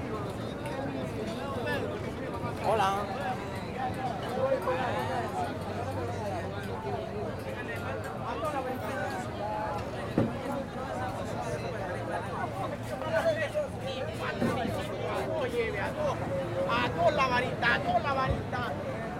Puerto Lopez, Équateur - fish market